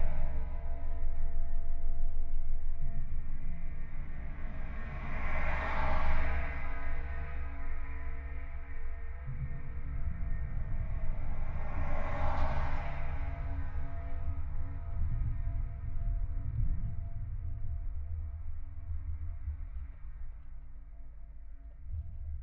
Žalioji, Lithuania, mics on railings
contact mics on the railings of bridge...cars passing by...